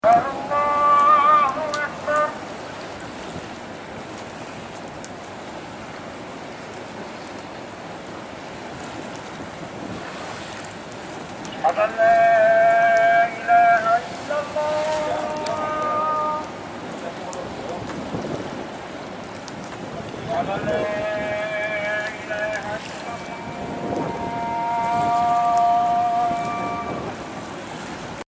praying atoll
the pray of the island
21 June 2010